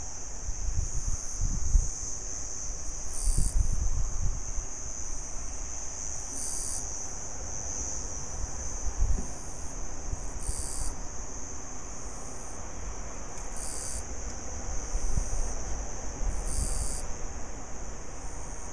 日本, 北海道斜里郡斜里町 - Ohotsuku ocean